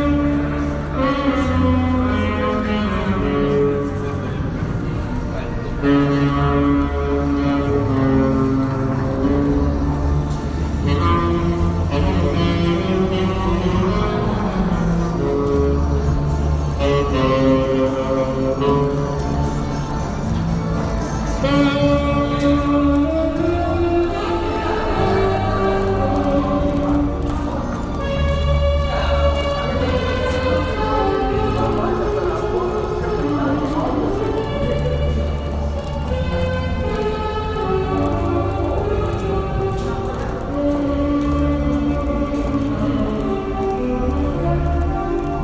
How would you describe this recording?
Walk through the underpass near Riga Central Market, passing a saxophone player. Recorded on Iphone SE.